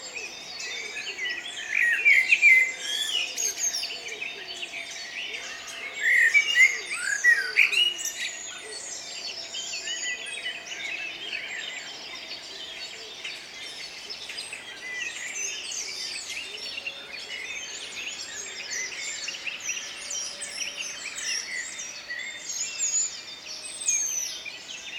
Goethepark (Kurpark) Bad Berka, Goetheallee, Bad Berka, Deutschland - Dawn Chorus #15
*Recording technique: AB
This is one of a compilation of field recordings conducted at Dawn in May 2020 in a German spa and wellness city of Bad Berka for the Citizen Science and Arts project "Dawn Chorus". Bad Berka is situated in the south of Weimar region in the state of Thuringia.
The Citizen Science and Arts Platform #DawnChorus is a project by BIOTOPIA (Bavaria’s new museum of life sciences and environment) and the Nantesbuch Foundation based in the Bavarian foothills of the Alps.
Recording and monitoring gear: Zoom F4 Field Recorder, RODE M5 MP, AKG K 240 MkII / Beyerdynamic DT 1990 PRO.
Thüringen, Deutschland, May 2020